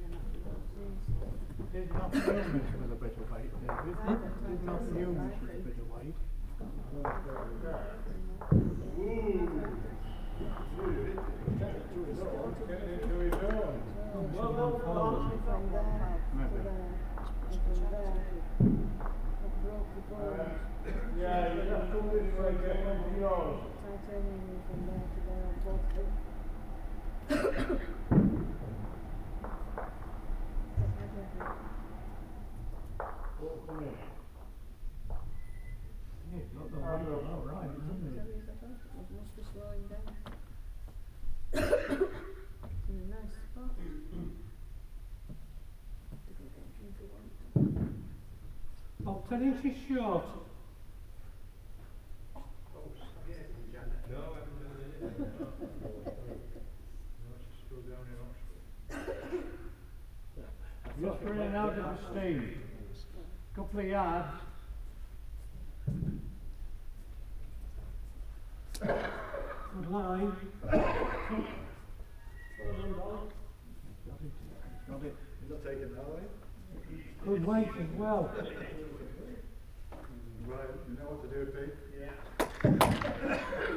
Norton, Malton, UK - Long mat bowls ... Norton rink ...
Long mat bowls ... voices ... heating ... Olympus LS 14 integral mics ...
24 January 2017, ~13:00